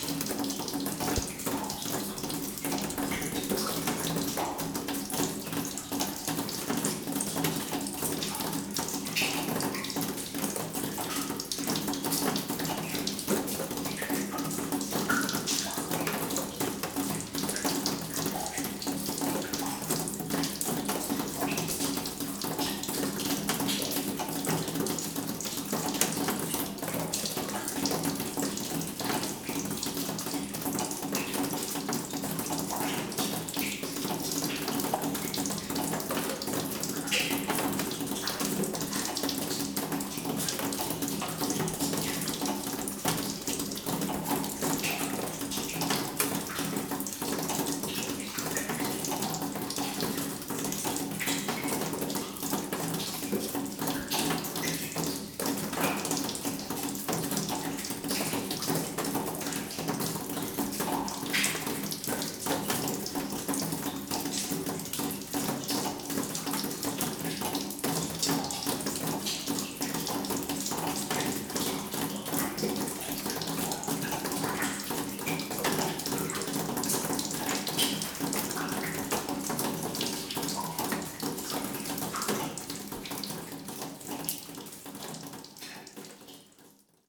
Differdange, Luxembourg - Water on metal
In an underground mine, in the middle of a tunnel, water is falling on a rotten sheet metal.
Hussigny-Godbrange, France, 28 March 2016, 09:40